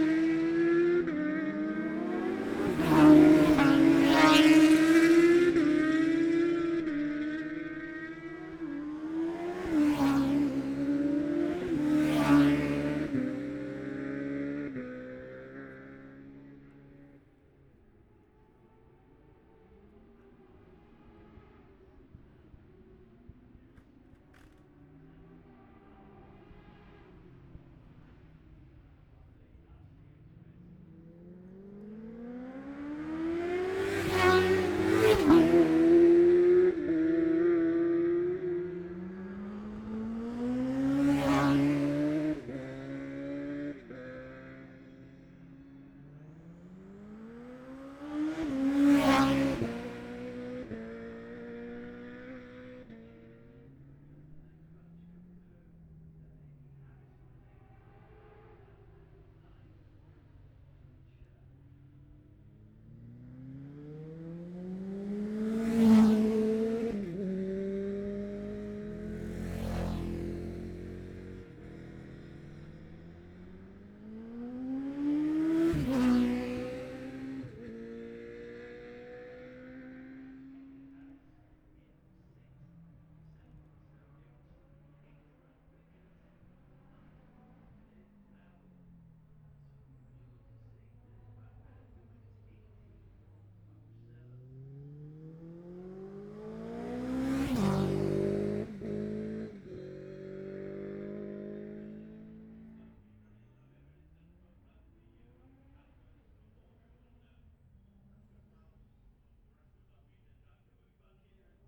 bob smith spring cup ... classic superbikes practice ... luhd pm-01 mics to zoom h5 ...